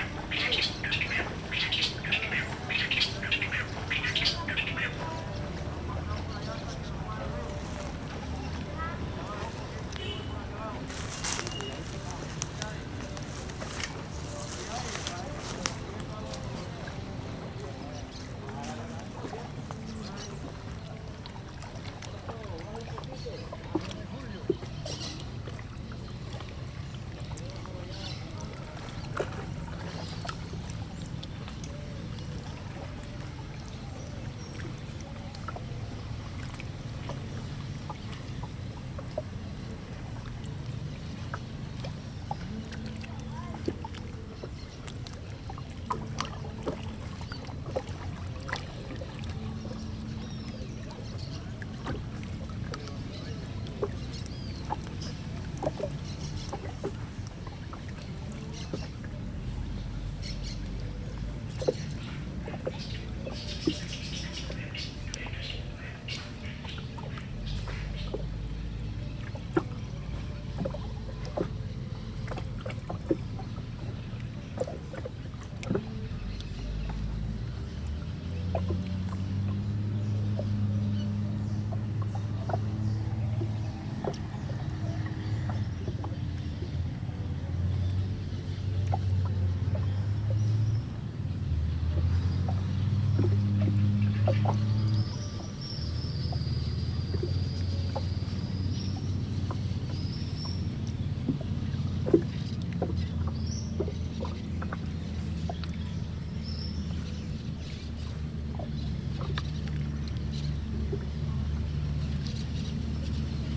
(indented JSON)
{
  "title": "Orilla del Magdalena, Mompós, Bolívar, Colombia - Areneros",
  "date": "2022-05-02 15:29:00",
  "description": "Un grupo de tres hombres sin camisa cargan a pala una volqueta con arena y piedra de río que fue extraída del Magdalena. Una de las barca que sirven para recoger la arena, espera en la orilla.",
  "latitude": "9.24",
  "longitude": "-74.42",
  "altitude": "12",
  "timezone": "America/Bogota"
}